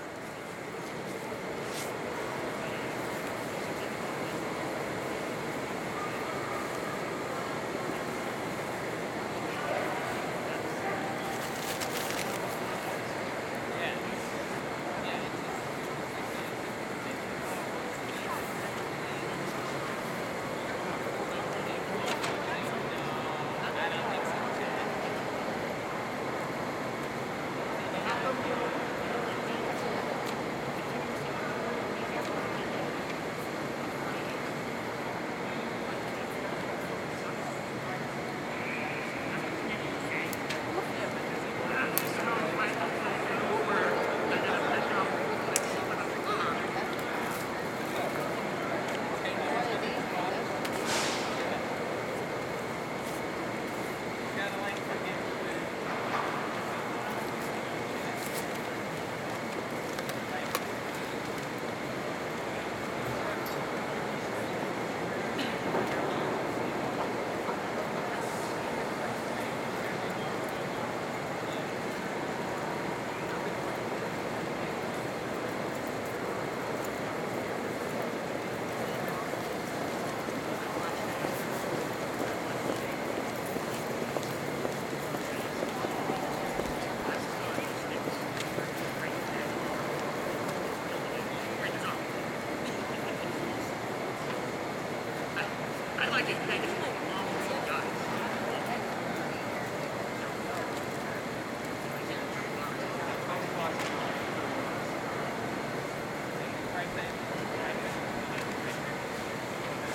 E 42nd St, New York, NY, USA - Grand Central Terminal at night
Grand Central Terminal, Main Concourse, at night.
United States, 5 April 2022